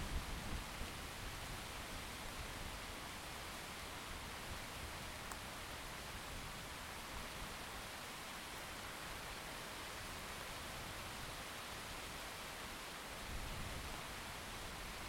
Szczawnica, Polska - Summer Storm in Mountains
Summer storm in the Beskidy mountains. Recorded with Zoom H2n